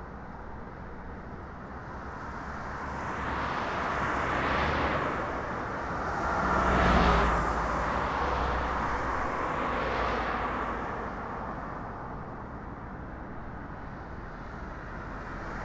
cars, trucks, passenger and freight train passing by
the city, the country & me: march 5, 2013
5 March 2013, ~16:00